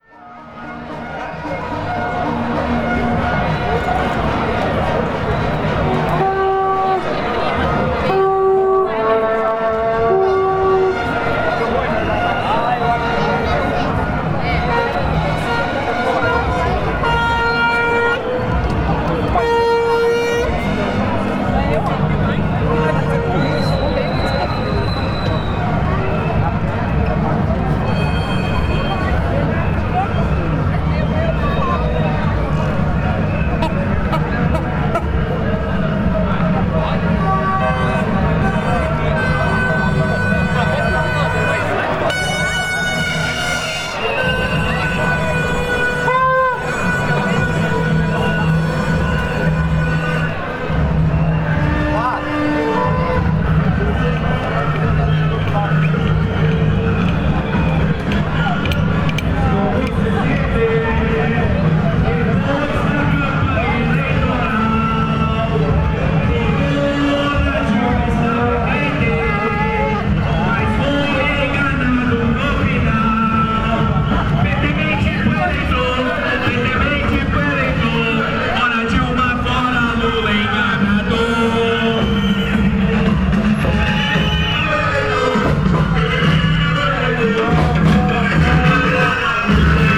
Calçadão de Londrina: Manifestação contra Dilma Rousseff - Manifestação contra Dilma Rousseff / Manifestation against Dilma Rousseff
Panorama sonoro: milhares de participantes de uma manifestação contra a presidenta Dilma Rousseff com apitos, cornetas, caminhões de som e palavras de ordem. A manifestação se originou na Avenida Higienópolis e percorreu todo o Calçadão em um domingo à tarde.
Thousands of participants in a demonstration against President Dilma Rousseff with whistles, horns, sound trucks and slogans. The demonstration originated in the Avenue Higienópolis and it crossed the whole Boardwalk on a Sunday afternoon.
Londrina - PR, Brazil, 13 March 2016